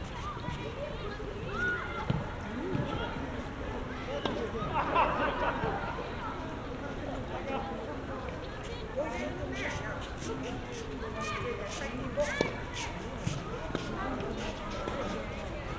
Waniminamihama, Ōtsu-shi, Shiga-ken, Japan - 201812241051 Wani Public Tennis Court and Athletic Ground
Title: 201812241051 Wani Public Tennis Court and Athletic Ground
Date: 201812241051
Recorder: Zoom F1
Microphone: Roland CS-10EM
Location: Wani, Otsu, Shiga, Japan
GPS: 35.159310, 135.923385
Content: binaural tennis japan japanese people traffic wind sports children adults talking crow
24 December 2018, 10:51